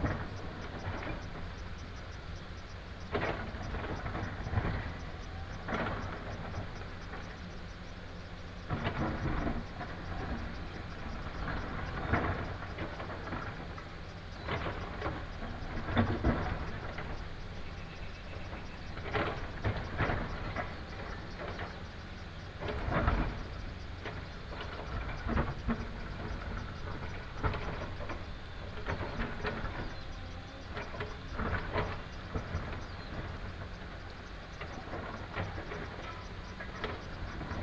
虷仔崙橋, Jinlun, Taimali Township - On the river bank
On the river bank, Stream sound, Bird call, Factory construction sound behind
Binaural recordings, Sony PCM D100+ Soundman OKM II
Taitung County, Taiwan, 1 April 2018, ~16:00